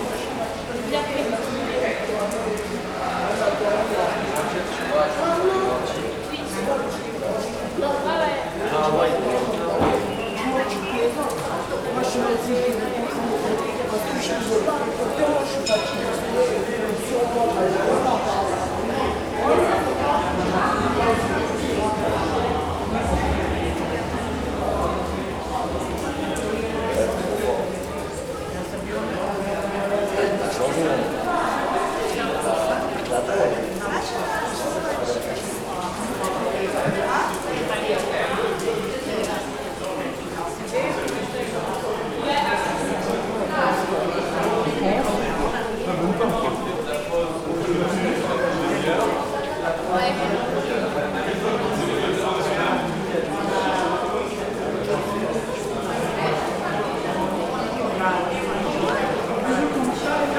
Passage des Arbalétriers, Saint-Denis, France - Centre Commercial Basilique
This recording is one of a series of recording mapping the changing soundscape of Saint-Denis (Recorded with the internal microphones of a Tascam DR-40).
27 May 2019